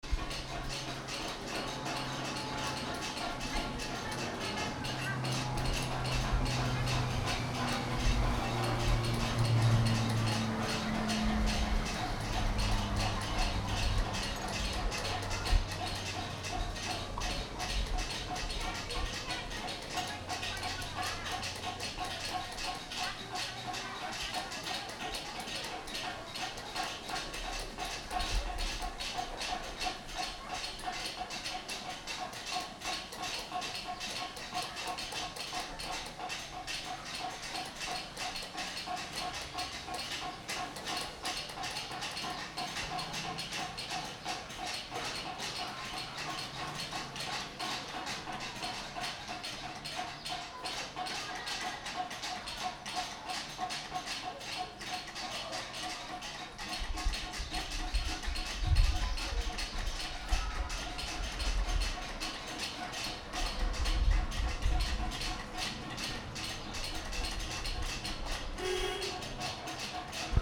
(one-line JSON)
{"title": "Boudhha, Kathmandu, Nepal - Metal-workers beating rhythmically with their hammers", "date": "2014-04-03 08:20:00", "description": "Recorded in the late morning on a sunny terrace, overlooking a street filled with metal-workers. They create intricate rhythmic patterns together.", "latitude": "27.72", "longitude": "85.36", "altitude": "1340", "timezone": "Asia/Kathmandu"}